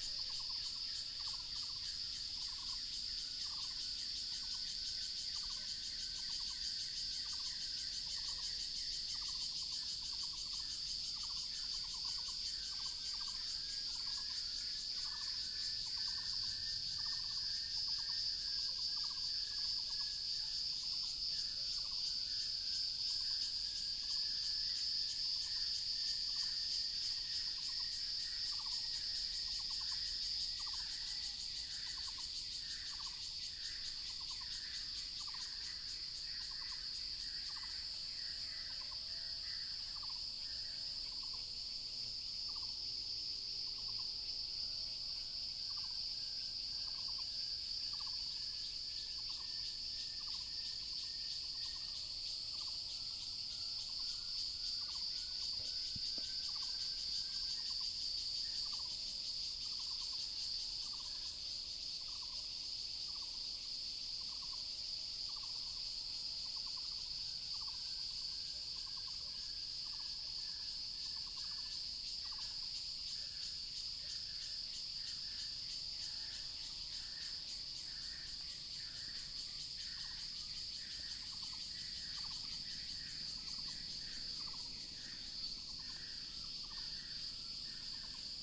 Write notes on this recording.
Morning in the mountains, Birdsong, Cicadas sound, Frogs sound, Traffic Sound